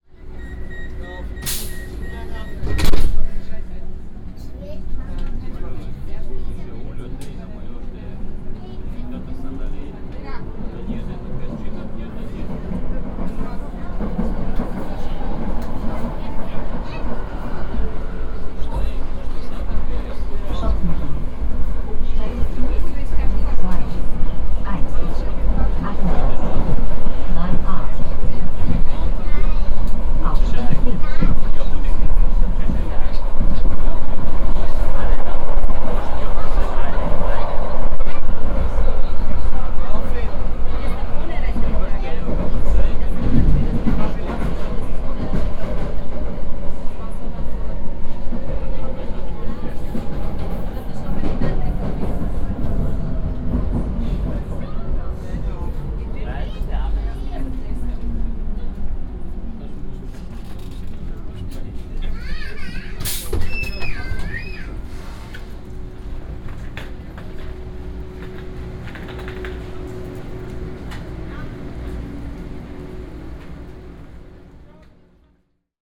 Binaural recording of a short metro ride from Schwedenplatz station.
recorded with Soundman OKM + Sony D100
posted by Katarzyna Trzeciak
Österreich, 13 July 2017